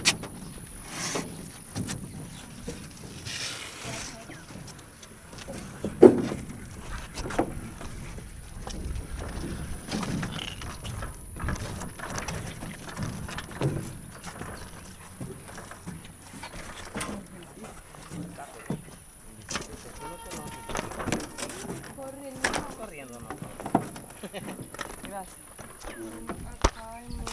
Angostura-San Sebastián, Magdalena, Colombia - Paso del caño
Una chalupa empujada a remo conecta el corregimiento de El Horno con el de Angostura. El capitán trabaja todos los días de 5AM a 7PM.